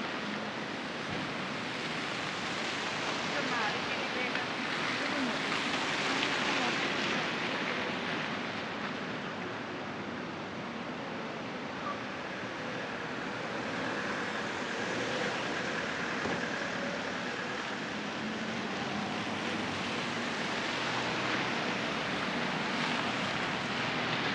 {
  "title": "Rue Sainte-Catherine O, Montréal, QC, Canada - Peel Street",
  "date": "2020-12-30 16:26:00",
  "description": "Recording at the corner of Peel St and Saint-Catherine St. There is a bit more chatter from pedestrians and continuous cars travelling through the snowy conditions. This would be a usual time in which traffic would increase had workplaces been on regular schedules and opened.",
  "latitude": "45.50",
  "longitude": "-73.57",
  "altitude": "50",
  "timezone": "America/Toronto"
}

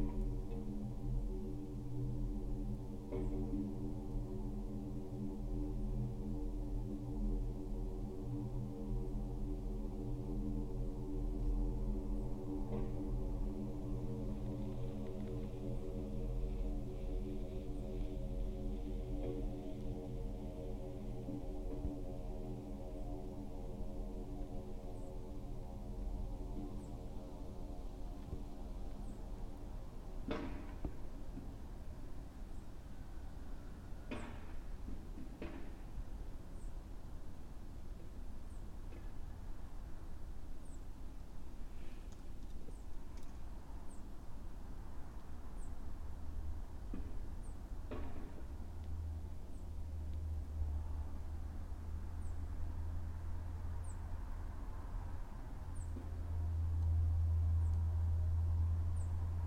{
  "title": "quarry, Marušići, Croatia - void voices - stony chambers of exploitation - borehole",
  "date": "2012-12-28 14:02:00",
  "description": "winter, in- and outside of the borehole",
  "latitude": "45.42",
  "longitude": "13.74",
  "altitude": "269",
  "timezone": "Europe/Zagreb"
}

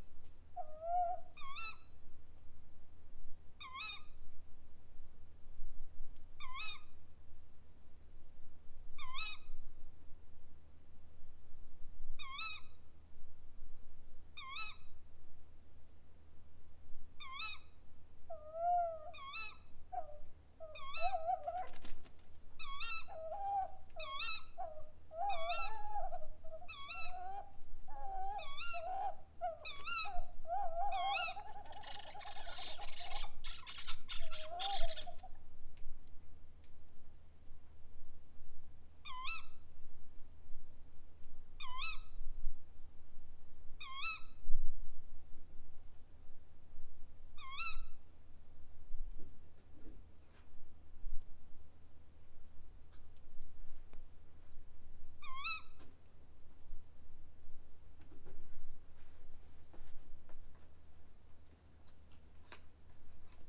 {
  "title": "Gemeinde Keutschach am See, Österreich - 2 Waldkäuzchen im Dialog",
  "date": "2017-02-17 01:30:00",
  "description": "in a quiet apple garden, two brown owls talk to each other. At the end, a surprising finish results",
  "latitude": "46.60",
  "longitude": "14.13",
  "altitude": "599",
  "timezone": "GMT+1"
}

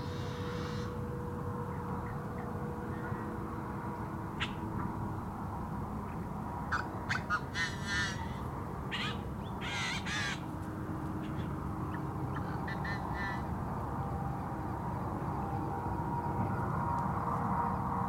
{
  "title": "Lone Pine, CA, USA - Sunset Chorus of Birds on Diaz Lake",
  "date": "2017-07-14 19:45:00",
  "description": "Metabolic Studio Sonic Division Archives:\nSunset Chorus of Birds on Diaz Lake. Includes ambient traffic noise from highway 395. Recorded on Zoom H4N",
  "latitude": "36.57",
  "longitude": "-118.06",
  "altitude": "1124",
  "timezone": "America/Los_Angeles"
}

